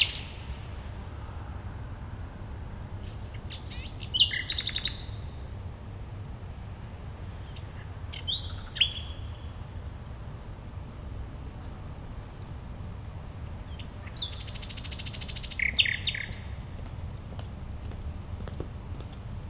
Mitte, Berlin, Germany - nachtigall in der gartenstraße
eine nachtigall am spielplatz gartenstraße, a nightingale at playground, gartenstraße
25 April, ~21:00